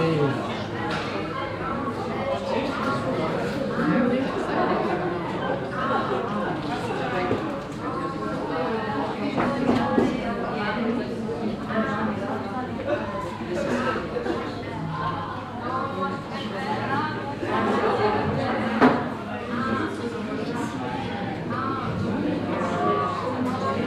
Rüttenscheid, Essen, Deutschland - essen, rüttenscheider str, cafe bar
In einem lokalen Szene Cafe- Bar. Die Klänge der Cafemaschine, Stimmengewirr, Bestecke und Geschirr untermalt von französischer Chanson Musik.
Inside a popular local cafe-bar. The sounds of the coffee machine, dishes, voices underlayed by french chanson music.
Projekt - Stadtklang//: Hörorte - topographic field recordings and social ambiences
Essen, Germany, 14 May 2014